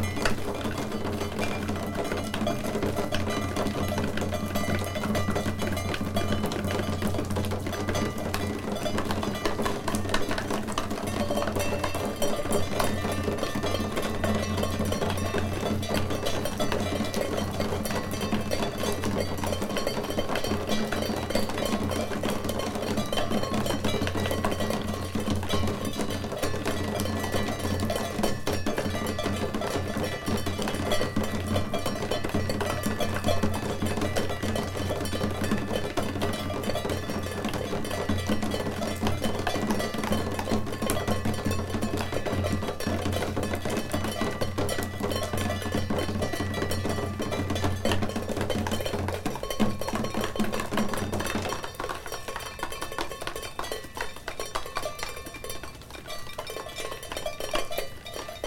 Rain as leaded falling petals (or an ancient sardinian shamanic ritual))
Province of Carbonia-Iglesias, Italy